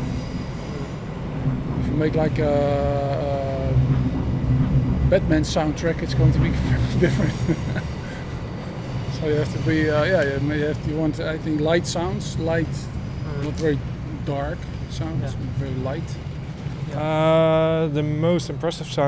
Schenkkade, Binckhorst, Den Haag - TL-Interviews#2, Binckhorst. Den Haag
Interviews about surrounding sounds on Schenkviaduct. Binckhorst. Den Haag